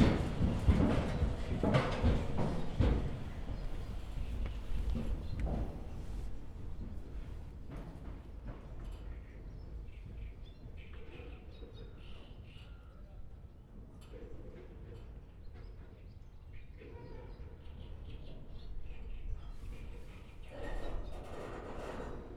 samochodzy przejeżdzają mostem, warsztaty z Jackiem Szczepankiem